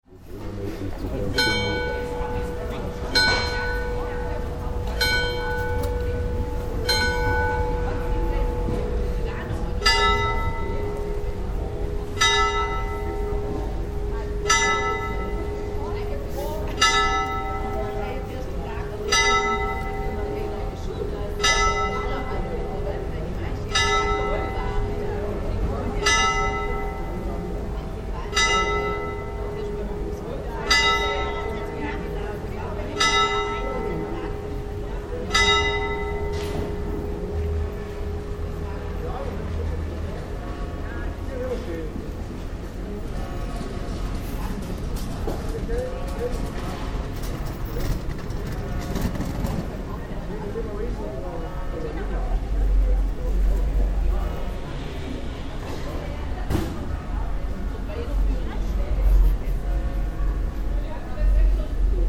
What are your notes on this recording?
Das Glockenspiel am Alten Rathaus erklingt jeden Tag zur gleichen Zeit, nämlich um 10.00 Uhr, 12 Uhr, 12.30 Uhr, 15.00 Uhr, 17.00 Uhr, 18.00 Uhr und 21.00 Uhr. Die Melodien allgemein bekannter Volkslieder sind von der Jahreszeit abhängig und werden variiert. In der Adventszeit bis zum Feiertag Heilige Drei Könige werden adventliche und weihnachtliche Lieder gespielt.